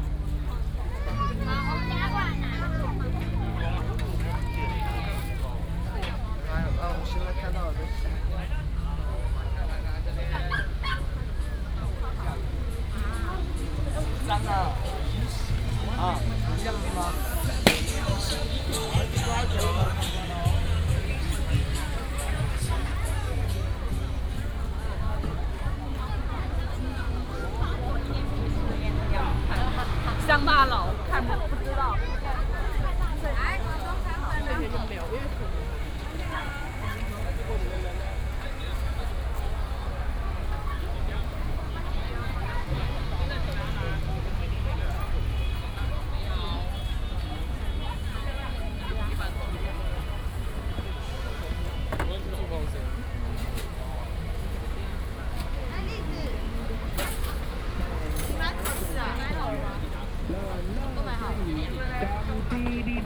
南大路觀光夜市, Hsinchu City - walking through the night market
Walking through the night market, Traffic sound